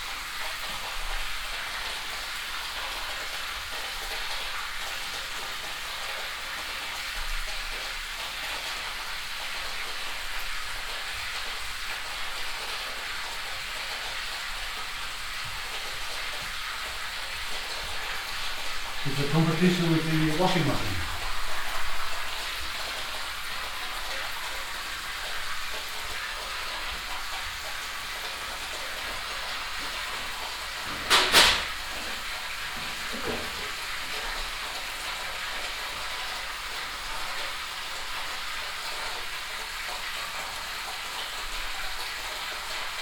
fröndenberg, niederheide, garage of family harms
set up of the installation of finnbogi petursson - filling in the water